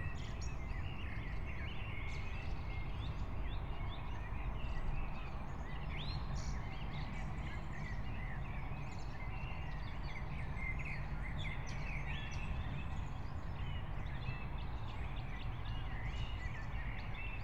04:30 Brno, Lužánky park
Soundscapes of the Anthropocene
(remote microphone: AOM5024/ IQAudio/ RasPi2)
Jihomoravský kraj, Jihovýchod, Česko